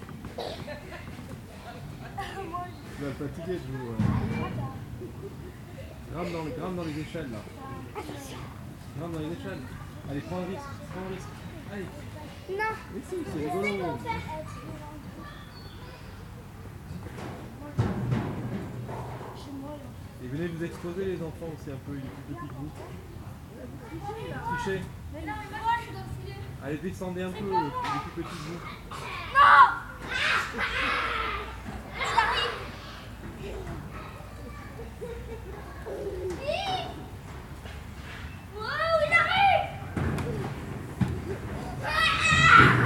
child, playing, park, run, creaming, parent, tal
Captation ; Zoom h4n
France métropolitaine, France, 22 November